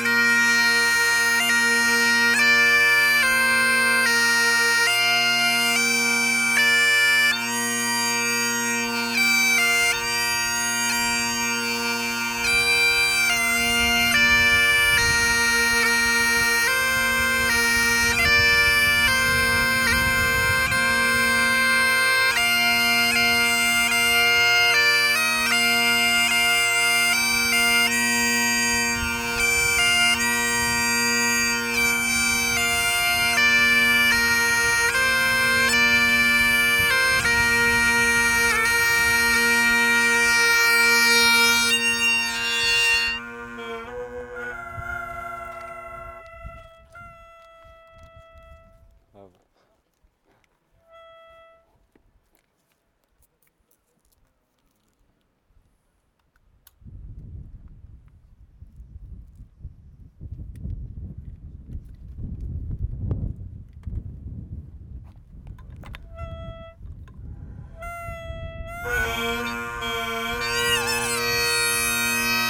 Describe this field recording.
Bagpipes player in front of the sea, in front of Pen Hir, Recorded with zoom H6 and wind